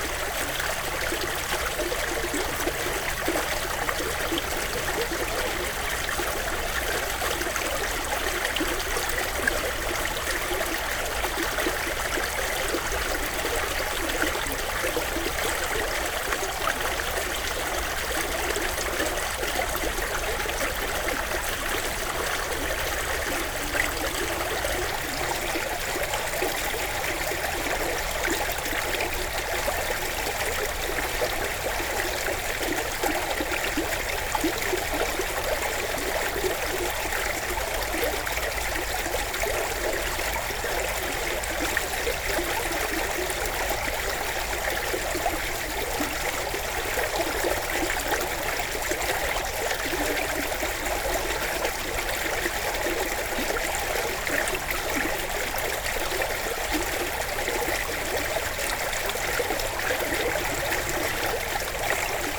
Chastre, Belgique - Houssière river
The small Houssière river, in a bucolic landscape. During all recording, a cat is looking to this strange scene, and is rolling on the ground :)
Chastre, Belgium, 14 August 2016